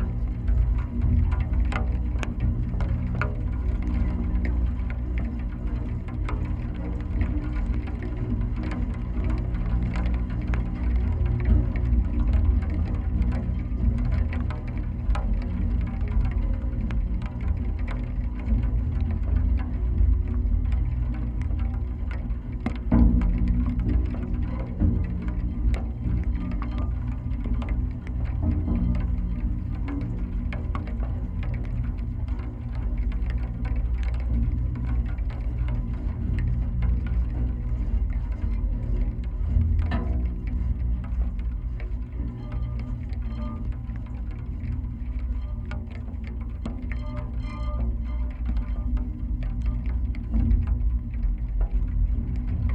Parallel sonic worlds: Millennium Bridge deep drone, Thames Embankment, London, UK - Millenium Bridge wires resonating in rain
Drops often hit on, or very close to, the mics. People are still walking past but the wet dampens their footsteps. At one point a large group of school kids come by, some squeaking their trainers on the wet metal surface. There is a suspicion of some of their voices too.
England, United Kingdom, 20 May 2022